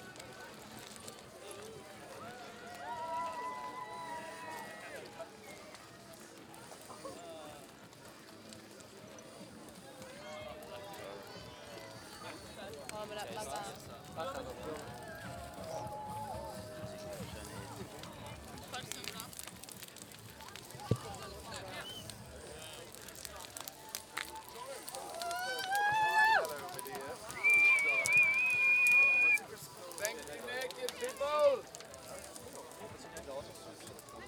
Tankwa Town, Northern Cape, South Africa - The Union Burn
Inner perimeter recording of the art piece Union being burned at Afrikaburn in 2019
Namakwa District Municipality, Northern Cape, South Africa, 2 April 2019, 20:21